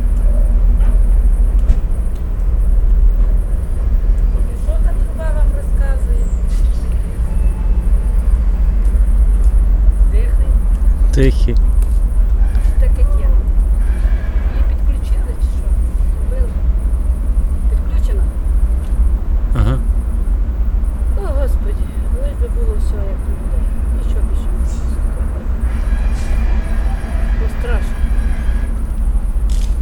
Pershotravnevyi district, Chernivtsi, Chernivets'ka oblast, Ukraine - At the edge of the city